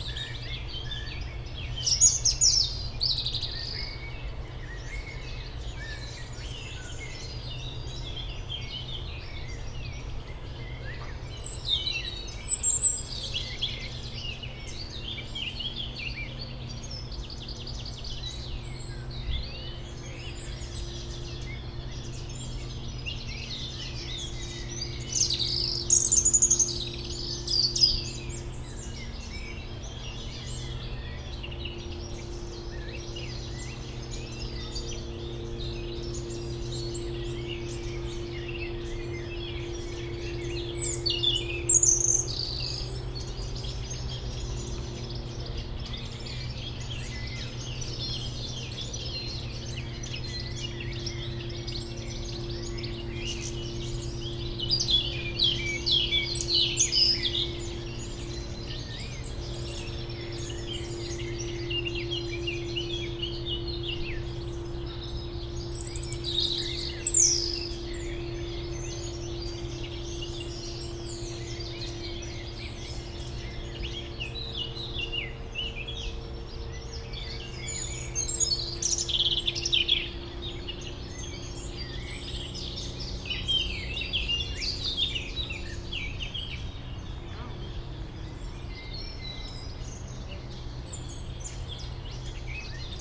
Dans le bois de Memard 73100 Aix-les-Bains, France - rouge gorge
Un rouge gorge au premier plan entouré de merles et autre oiseaux dans ce petit bois près du Jardin Vagabond, en zone péri urbaine, arrière plan de la rumeur de la ville et des bateaux sur le lac.
France métropolitaine, France, 1 April 2021, 11:30